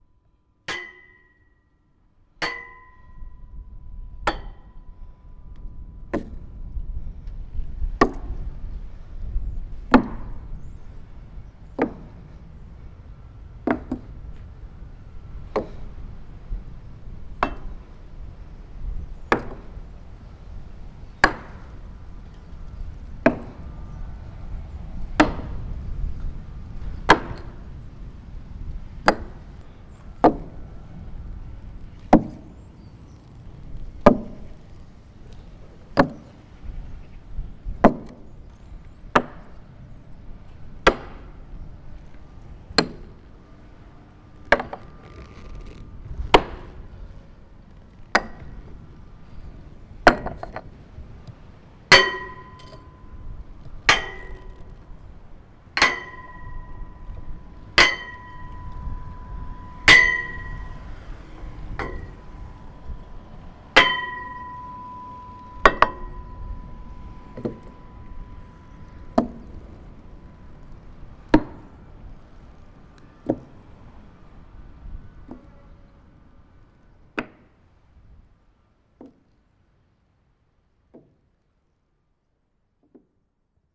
Saint-Nazaire, France - chocs
Just got a piece of metal, and hit barely everything that was around with.
22 September, 19:40